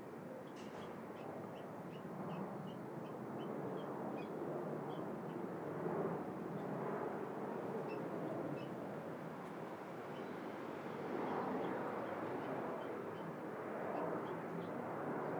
London, near Oval Underground Station UK - Mowli Street Sounds
Recording made at 22:00 using a shotgun microphone, cloudy, a lot of planes flying over head in various directions (Too and from Heathrow airport?)
It has been raining all day, but now its calmer but there are still grey clouds above.
14 June 2016, 22:00